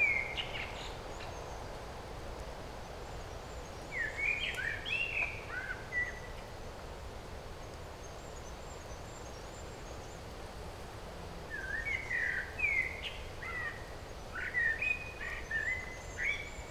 Bonaforth, Höllegrundsbach, Deutschland - Höllegrundsbach 01
recording in the dry creek bed of the Höllegrundsbach
Hann. Münden, Germany, 25 May 2012